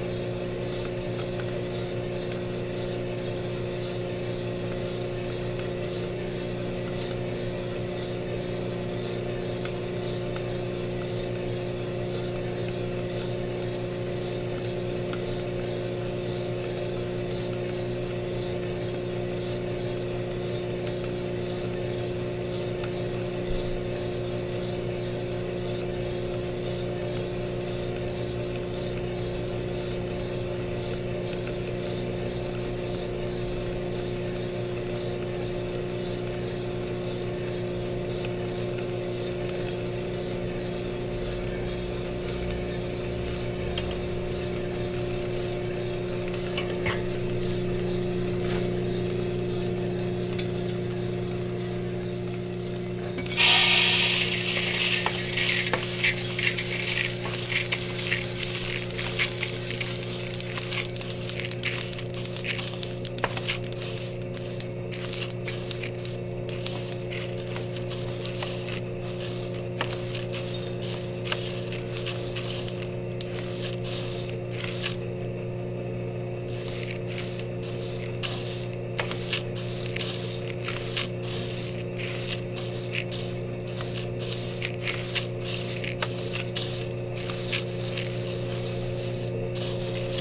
...roasting 1kg coffee beans...entire process